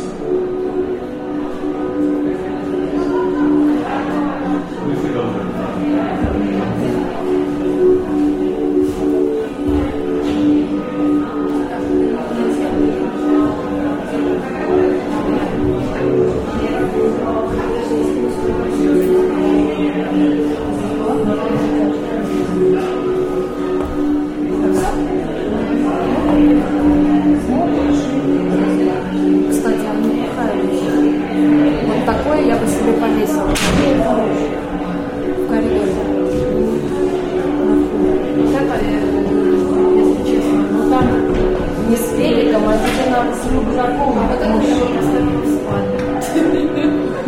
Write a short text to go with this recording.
The sound of "The Sun Sets At A Foot's Pace" showing at the exhibition opening with people walking around and talking in the background.